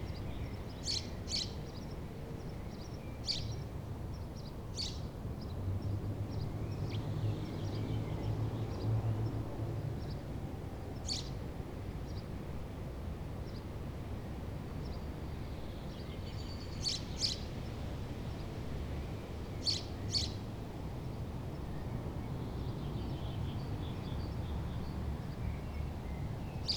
berlin, bergmannstraße: dreifaltigkeitskirchhof II - the city, the country & me: holy trinity graveyard II
cemetery ambience, birds
the city, the country & me: april 24, 2011
Berlin, Germany, 24 April 2011